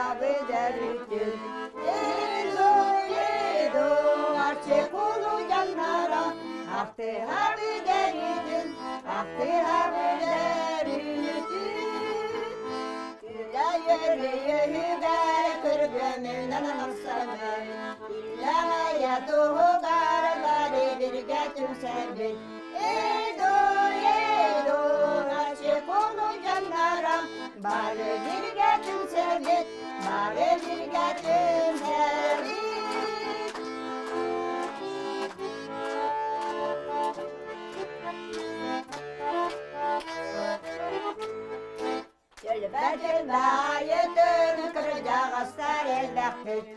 Оймяконский у., Респ. Саха (Якутия), Россия - Babushki v Oymyakone
Spring in Yakutiya. Just -15C/-20C. Some grandmoms travelling by UAZ-452 from Tomtor village to next village Oymyakon – one of the coldest villages in the world. On the way, they sang songs. When we arrived they met their friends – they still sang songs. It was spring holyday.
Sakha (Yakutiya) Republits, Russia, 10 April 2014